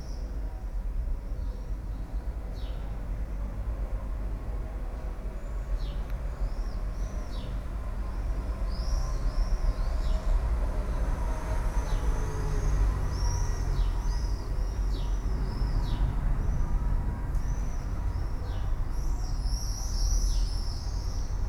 voices, a bicycle, swollows...